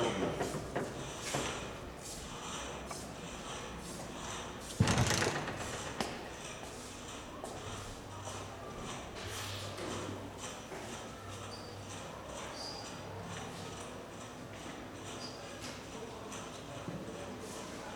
Santiago de Cuba, Tivoli, studio of Grupo Sarabanda